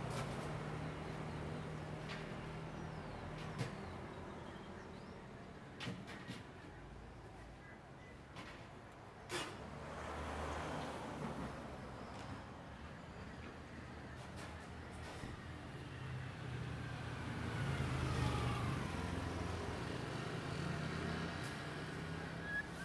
Fuzhong St 台南府中街 - A bird singing in a birdcage
A bird singing in a birdcage. 黑輪店旁鳥籠內小鳥鳴唱聲
Tainan City, Taiwan, 23 March 2014